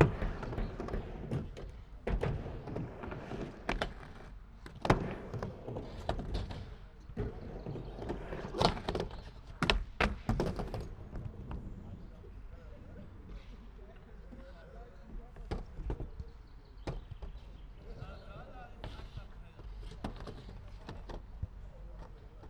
Stadtwald Köln - half pipe, skater
Skater at half pipe Stadtwaldrampe, city forest park Köln
(Sony PCM D50, Primo E172)
Nordrhein-Westfalen, Deutschland